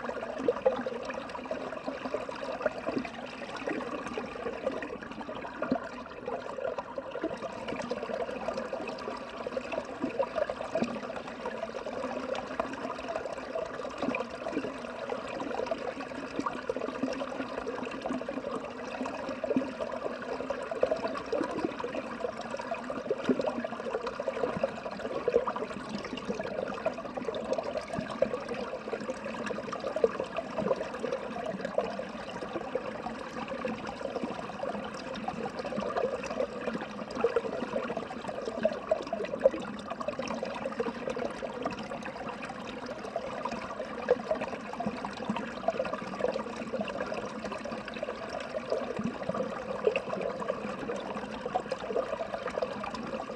Lithuania, Utena, stream under ice
small river stram running under ice